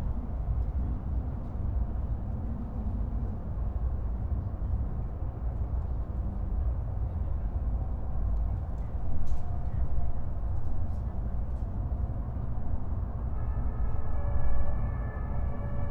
Berlin Bürknerstr., backyard window - distant mayday sounds
drones and distan sounds from the 1st of May parties in Kreuzberg
(SD702, MKH8020)
Berlin, Germany, 1 May 2016